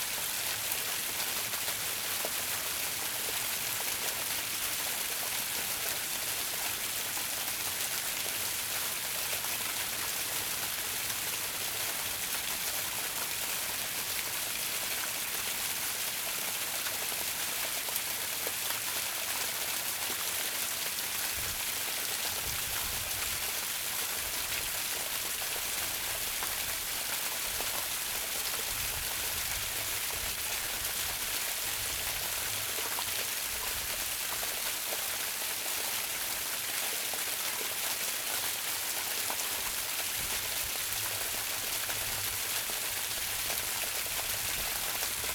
{"title": "Fengbin Township, Hualien County - small Waterfall", "date": "2014-10-09 13:19:00", "description": "In the side of the road, Water sound, small Waterfall\nZoom H2n MS+XY", "latitude": "23.47", "longitude": "121.47", "altitude": "49", "timezone": "Asia/Taipei"}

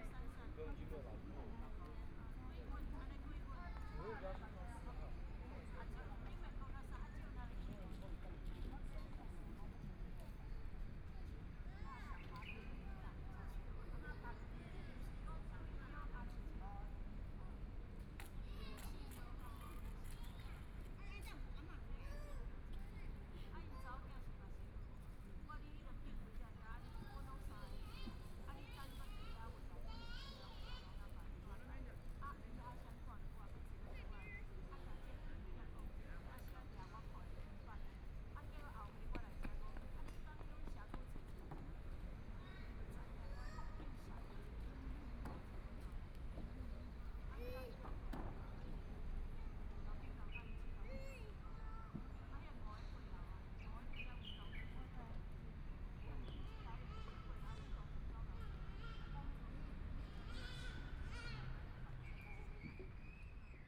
{"title": "永直公園, Taipei City - Sitting in the park", "date": "2014-02-25 17:43:00", "description": "Sitting in the park, Traffic Sound, Elderly voice chat, Birds singing, Children's play area\nBinaural recordings\nZoom H4n+ Soundman OKM II", "latitude": "25.08", "longitude": "121.55", "timezone": "Asia/Taipei"}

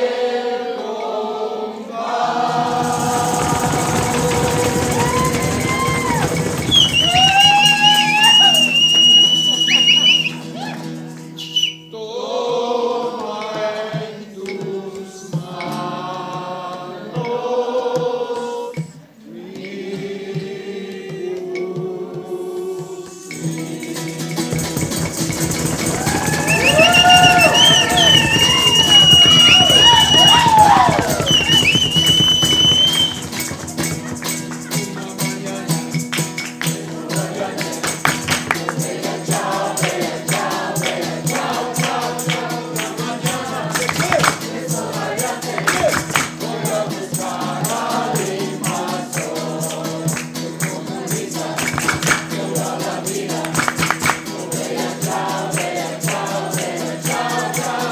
{"title": "El proyecto liguistico quetzalteco", "date": "2010-07-09 01:15:00", "description": "Language school graduation. Singing Bella Chao", "latitude": "14.83", "longitude": "-91.51", "altitude": "2326", "timezone": "America/Guatemala"}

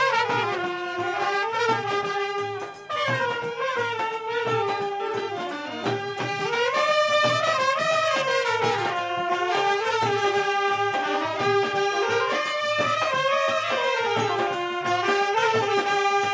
{"title": "Shahid Bhagat Singh Marg, near Bata, Cusrow Baug, Apollo Bandar, Colaba, Mumbai, Maharashtra, Inde - Collaba Market", "date": "2002-12-12 21:00:00", "description": "Collaba Market\nFanfare - ambiance", "latitude": "18.92", "longitude": "72.83", "altitude": "12", "timezone": "Asia/Kolkata"}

